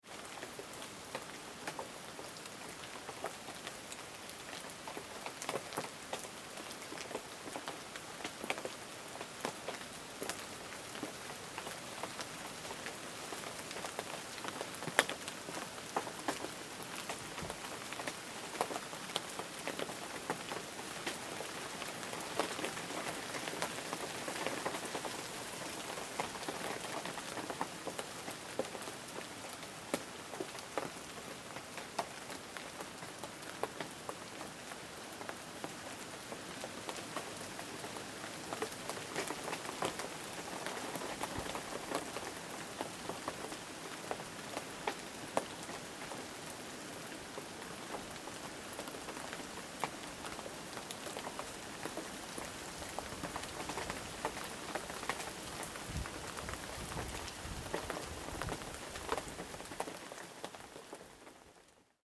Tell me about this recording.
stafsäter recordings. recorded july, 2008.